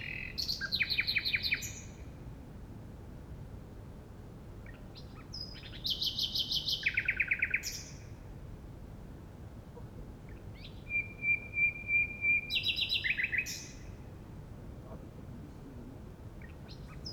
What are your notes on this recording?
a nighting at Mauerweg, Berlin Neukölln / Treptow, reflectins of his song at the houses opposite, (Sony PCM D50)